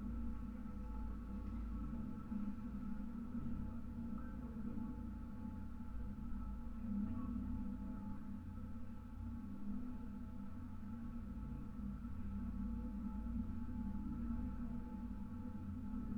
Anyksciai, Lithuania, treetop walking path
massive iron support towers of the treetop walking path. contact microphone recording.